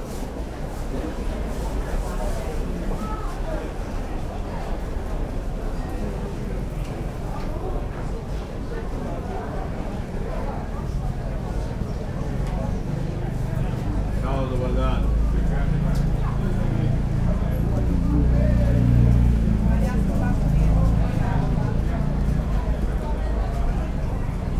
Narodni trg, Pula, Chorwacja - marketplace
produce market in Pula. place bustling with customers and vendors. (roland r-07)